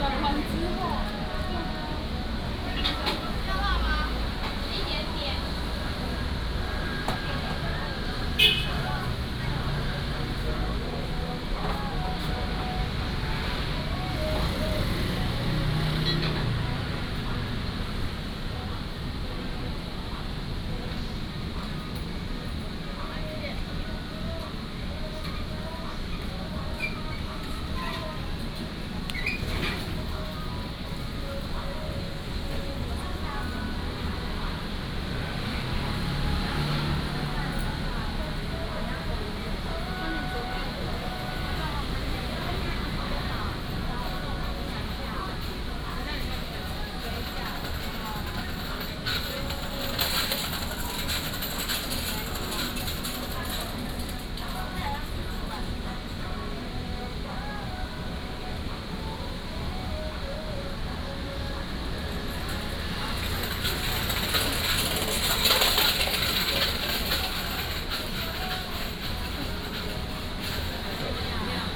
{"title": "Sec., Zhonghua Rd., Taitung City - Fried chicken shop", "date": "2014-09-08 19:59:00", "description": "In the road side shops, Traffic Sound, Fried chicken shop", "latitude": "22.75", "longitude": "121.15", "altitude": "18", "timezone": "Asia/Taipei"}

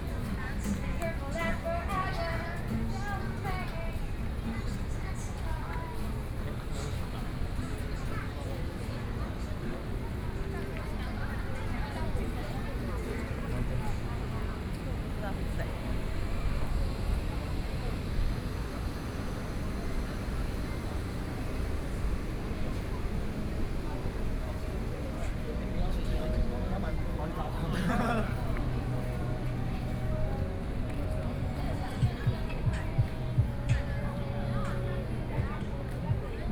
{"title": "主商里, Hualien City - walking in the Street", "date": "2014-08-28 20:14:00", "description": "walking in the Street, Various shops voices, Tourists, Traffic Sound, Transformation of the old railway into a shopping street", "latitude": "23.98", "longitude": "121.61", "altitude": "13", "timezone": "Asia/Taipei"}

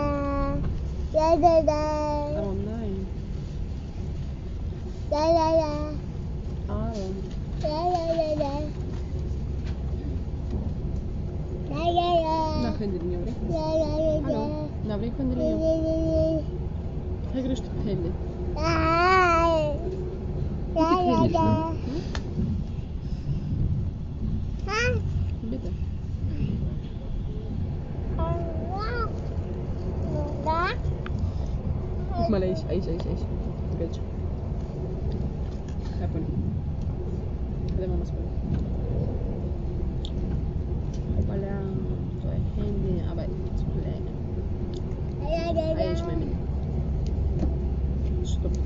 {"description": "Mutter mit Kind, das eine unbekannte Sprache spricht.", "latitude": "52.43", "longitude": "13.19", "altitude": "43", "timezone": "Europe/Berlin"}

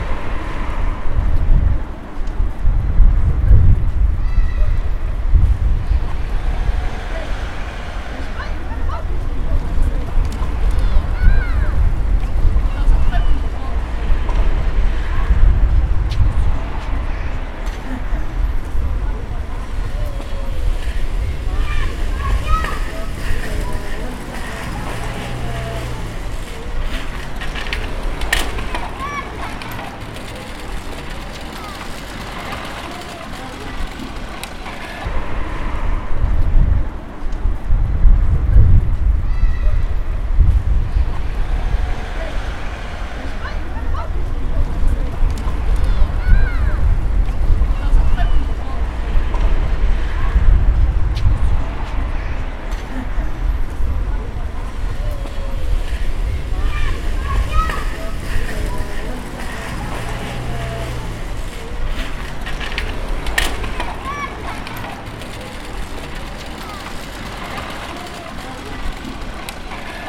{"title": "Sint-Jans-Molenbeek, Belgium - HousingSquare-StreetSide", "date": "2016-10-22 16:30:00", "description": "On this small public plaza, on the building side, a young group a people was chatting on a very limited portion of its surface, grouped on one of these perimetered rectangular platforms.\nOn the street side, younger girls were playing and biking in the circle shaped spots, the street traffic sound is way more present than on the building side.\nBinaural, to be listened to in comparison with the other recording on the building side.\nContext : project from Caroline Claus L28_Urban Sound Design studio :", "latitude": "50.85", "longitude": "4.32", "altitude": "35", "timezone": "Europe/Brussels"}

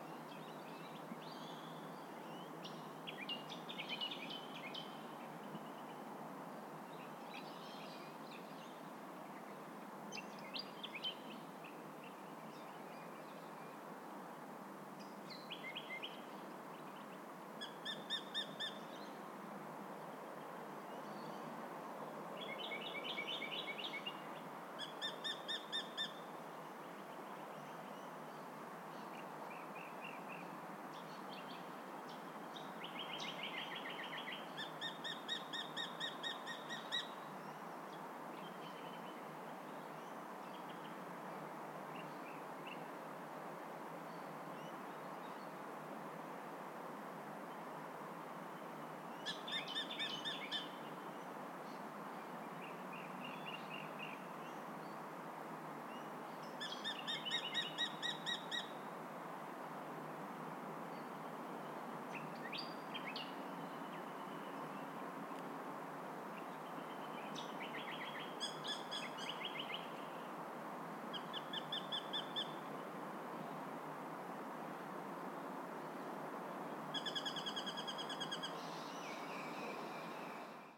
{"title": "Fremantle Cemetary, Western Australia - Sounds From Dr. Anna Aldersons Final Resting Place", "date": "2017-11-17 19:20:00", "description": "This is a field recording from the natural burial section of Fremantle Cemetary, next to where Dr. Anna Alderson was buried. She was a good friend, and a education mentor for several years and she has been missed since 5th June, 2016. The site now has 2 new native trees planted upon it, and is surrounded by beautiful native gardens. As Annas ex-gardener, I know she would loved this area for her burial site. I only wish my audio recording was as interesting as she was in life!\nShot on a Zoom H2N with ATH-MX40 headphones. MS Mode +5", "latitude": "-32.05", "longitude": "115.78", "altitude": "39", "timezone": "Australia/Perth"}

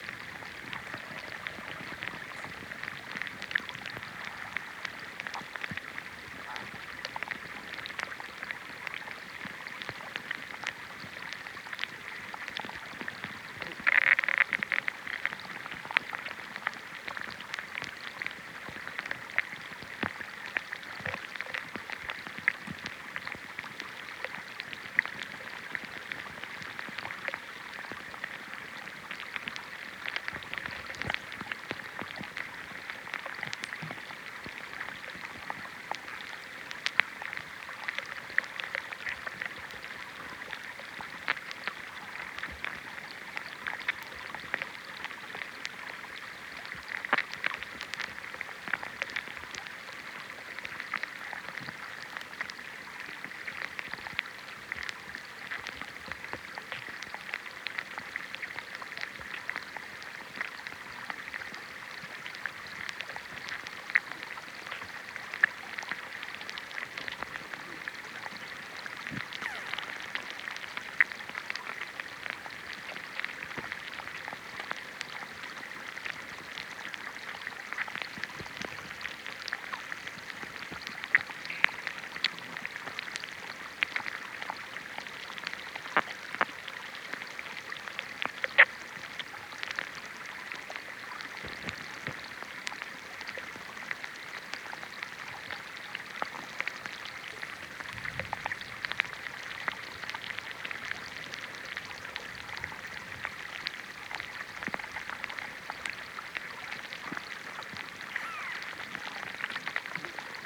{"title": "SBG, Salts del Rec de la Tuta - Microactividad subacuática", "date": "2011-08-14 14:30:00", "description": "Grabación realizada con hidrófonos en un pequeño torrente.", "latitude": "42.00", "longitude": "2.19", "altitude": "656", "timezone": "Europe/Madrid"}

